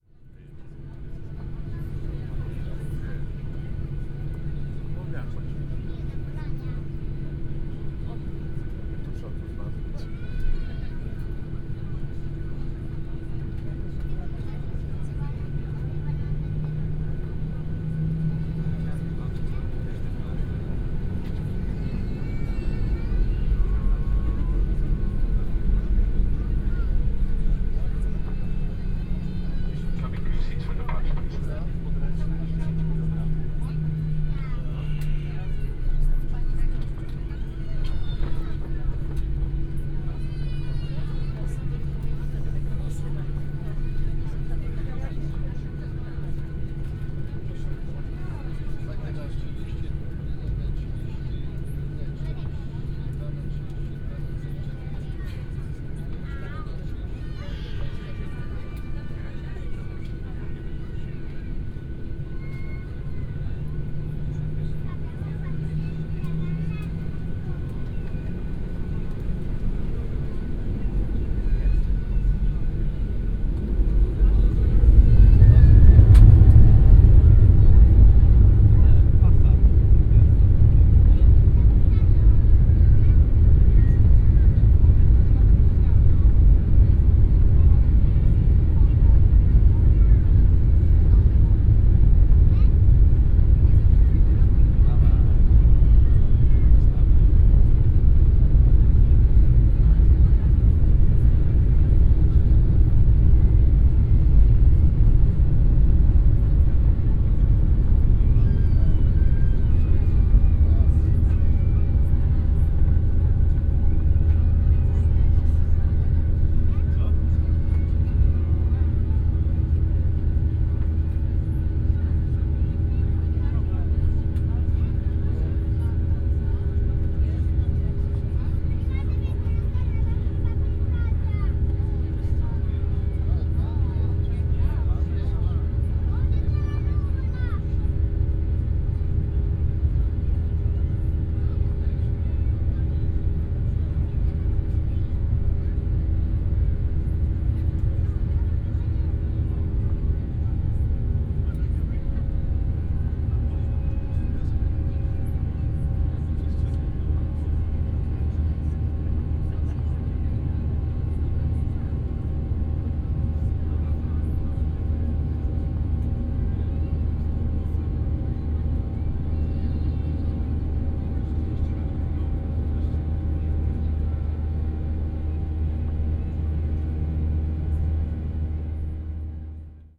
Lawica Airport, runway, flight to Rome - take off
(binaural recording)
roar of engines and cabin ambience during take off